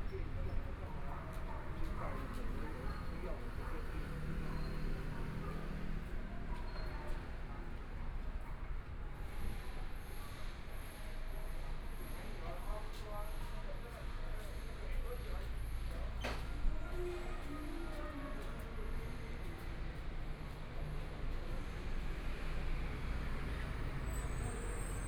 Sec., Chang'an E. Rd., Zhongshan Dist. - soundwalk
walking on the Road, Traffic Sound, Motorcycle Sound, Pedestrians on the road, Various shops voices, Binaural recordings, Zoom H4n+ Soundman OKM II